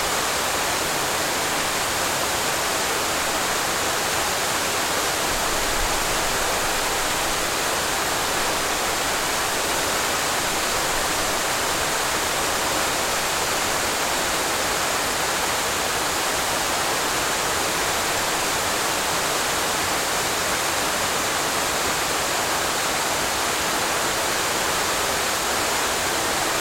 Garrison, NY, USA - 5 feet away from a waterfall
Natural white noise. 5 feet away from a waterfall.